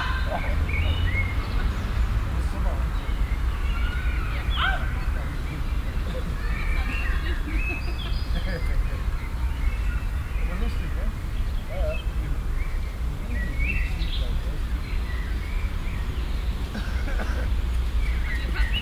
cologne, stadtgarten, frisbeespiel auf wiese
stereofeldaufnahmen im mai 08 - mittags
project: klang raum garten/ sound in public spaces - in & outdoor nearfield recordings